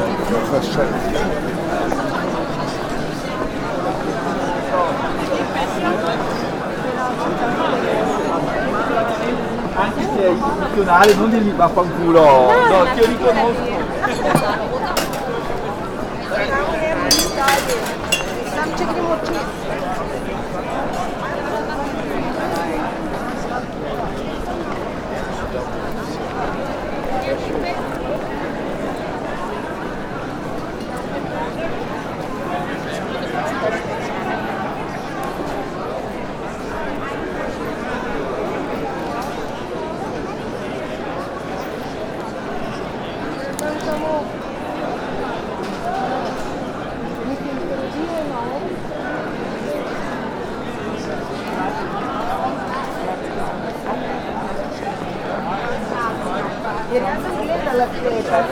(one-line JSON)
{"title": "night streets, Venice - night walk", "date": "2015-05-06 22:46:00", "description": "spring night, people talking, stony streets, walking ...", "latitude": "45.43", "longitude": "12.33", "altitude": "6", "timezone": "Europe/Rome"}